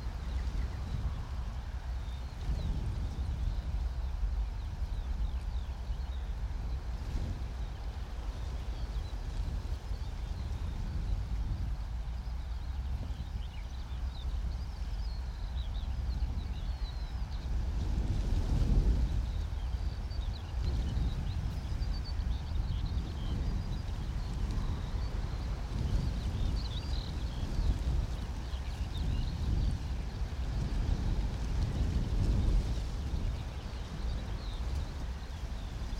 Streaming from a hedgerow in large intensively farmed fields near Halesworth, UK - Wind blowing through the bramble hedge, skylark background
Winds blow easily across these large unprotected fields, eroding the soil as they go. They gust through the low bramble hedges shivering leaves and prickly stems around the hidden microphones, sometimes briefly touching and knocking into them. When recording in person I would have been holding the mics rather than placing them out of sight inside the hedge. Streaming, where the equipment is left in place for 2 or 3 days, results in this kind of close, more physical relationship with the vegetation (birds and animals come much closer too, sometimes finding and examining the mics). It gives a very different sound perspective, a point of ear that would be impossible in the recordist's presence.
England, United Kingdom, 27 May 2021, 10:51